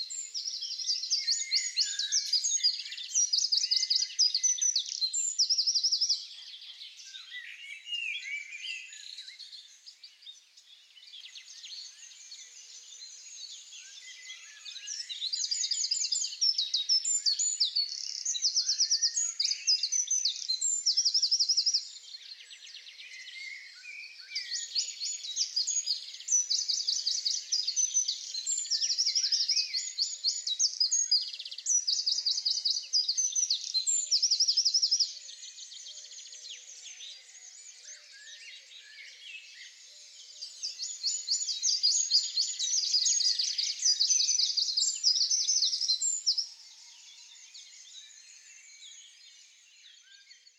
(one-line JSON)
{"title": "Rue de la Longeville, Hucqueliers, France - Hucqueliers - Oiseaux du matin", "date": "2019-05-26 05:30:00", "description": "Hucqueliers (Pas-de-Calais)\nAmbiance printanière du matin", "latitude": "50.57", "longitude": "1.92", "altitude": "119", "timezone": "Europe/Paris"}